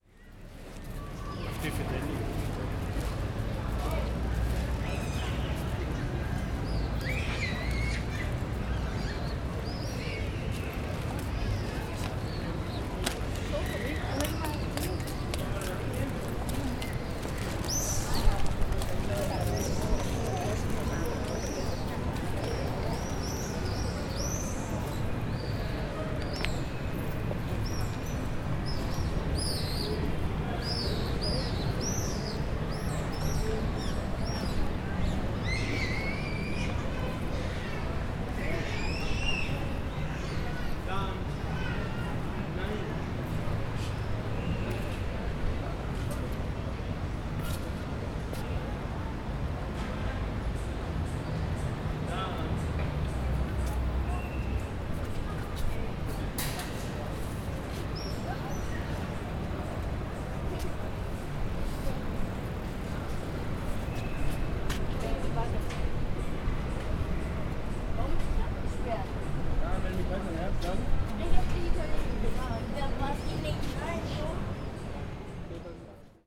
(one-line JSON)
{"title": "Platia Agiou Georgiou, Thessaloniki, Greece - Rotonda", "date": "2013-07-18 20:00:00", "description": "A clip from a soundwalk in the center of Thessaloniki. Rotonda is a famous roman- byzantine temple in the center of the city. You can hear birds which find trees in order to rest and also a lot of children playing around on the pedestrian. Old monuments construct urban discontinuities necessary for a better habitation in a city.", "latitude": "40.63", "longitude": "22.95", "altitude": "37", "timezone": "Europe/Athens"}